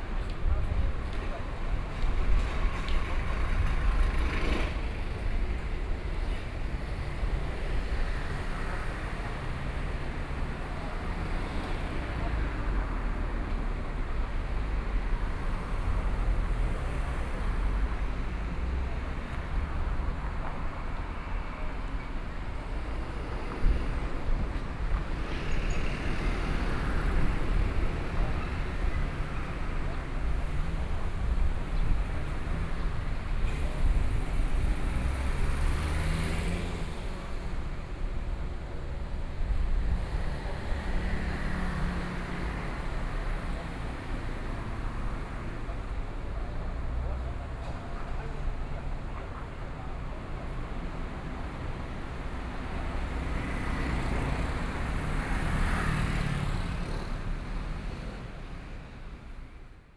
Bus stationStanding on the roadside, Traffic Sound, Very hot weather
Sony PCM D50+ Soundman OKM II
Keelung City, Nuannuan District, 暖暖區公所